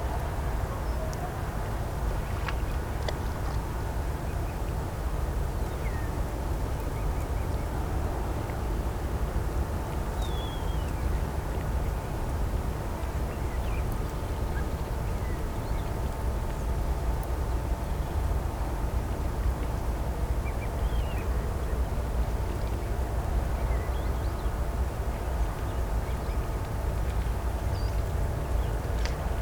at the river Warta. Noise of the city and gun shots from military practice area a few kilometers away (roland r-07)
Śrem, Poland, September 2018